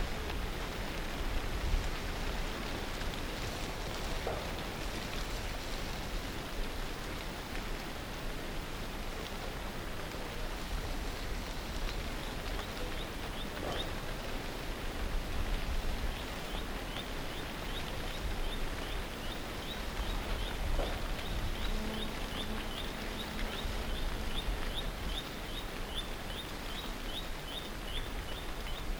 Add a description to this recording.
ambiance enregistrée sur le tournage de bal poussiere dhenri duparc